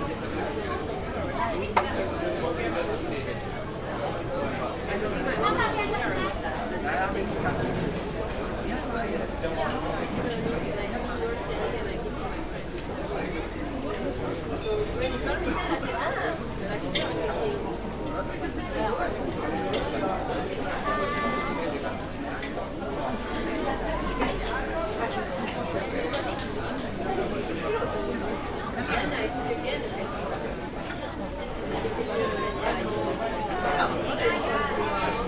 Rue Jean Codaccioni, Sartène, France - Sartène place du village
Sartène place du village
Captation : ZOOM H6